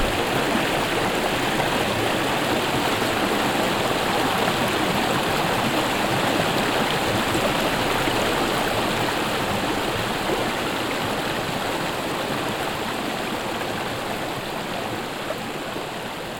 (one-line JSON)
{"title": "stolzembourg, camping place, our", "date": "2011-08-04 18:47:00", "description": "On a camping place at the river our.\nThe water flows over a low, long stone line that has been build by children here and functions like a small dam.\nStolzembourg, Camping Platz, Our\nAuf einem Campingplatz am Fluss Our. Das Wasser fließt über eine flache lange Steinmauer, die von Kindern hier gebaut wurde und wie ein kleiner Damm funktioniert.\nStolzembourg, terrain de camping, Our\nSur un terrain de camping près de la rivière Our.\nL’eau coule par-dessus une longue rangée de pierres que des enfants ont posées et qui fait comme un petit barrage.", "latitude": "49.98", "longitude": "6.17", "altitude": "235", "timezone": "Europe/Luxembourg"}